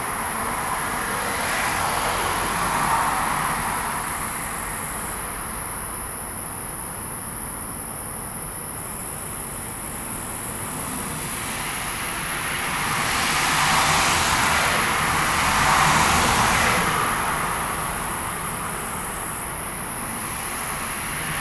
Recorded onto a Marantz PMD661 with a pair of DPA 4060s under the blue moon.
Austin, TX, USA, 31 July